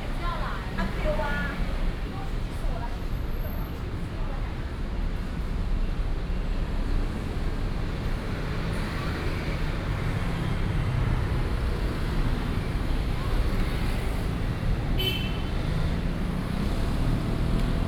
Sec., Ren’ai Rd., Da’an Dist., Taipei City - Walking on the road
Traffic Sound, Walking on the road
Taipei City, Taiwan, 24 July 2015